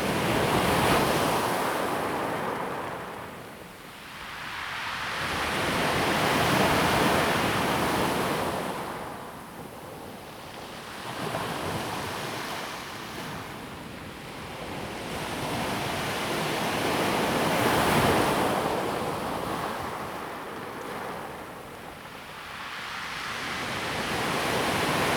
七星潭, Xincheng Township - At the beach
sound of the waves
Zoom H2n MS+XY +Sptial Audio
Xincheng Township, Hualien County, Taiwan, 19 July 2016, ~12:00